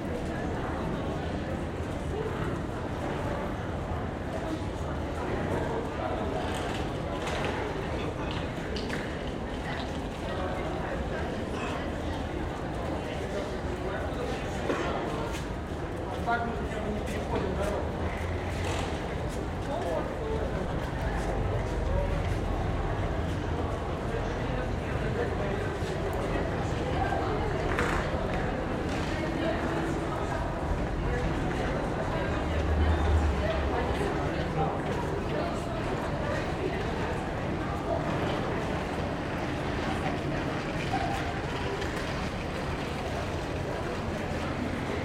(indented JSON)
{
  "title": "Podwale Grodzkie, Gdańsk, Polska - Underpass Near Train Station",
  "date": "2022-07-06 10:40:00",
  "description": "Underpass near train station. Recorded with Sound Devices MixPre-6 II and Audio Technica BP 4025 inside Rycote BBG.",
  "latitude": "54.36",
  "longitude": "18.65",
  "altitude": "3",
  "timezone": "Europe/Warsaw"
}